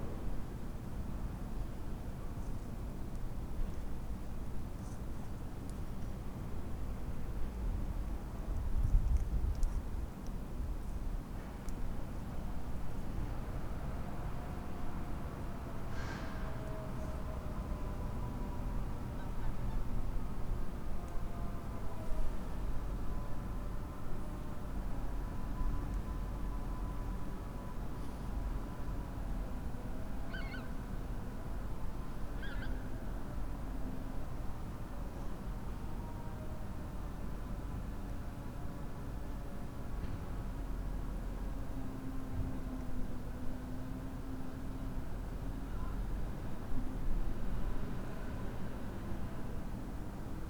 Berlin: Vermessungspunkt Friedel- / Pflügerstraße - Klangvermessung Kreuzkölln ::: 20.03.2011 ::: 04:20